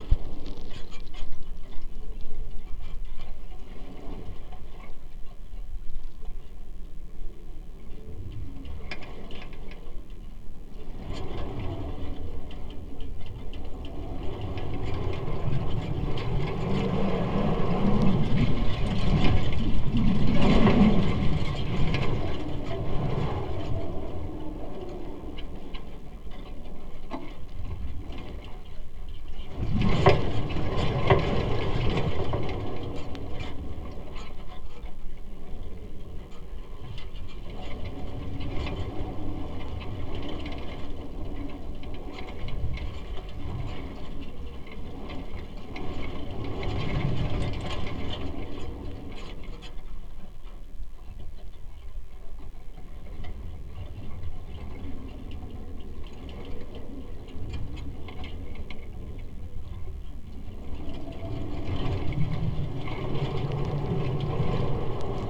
Punnetts Town, UK - Wire Fence in the Wind
Storm Barbara brought wet and windy weather to the north of the UK in particular. In the south it was less powerful and blew through on the evening of the 23rd December. First attempt at a contact mic recording using DIY piezo mic with Tascam DR-05 recorder.
Heathfield, UK, 23 December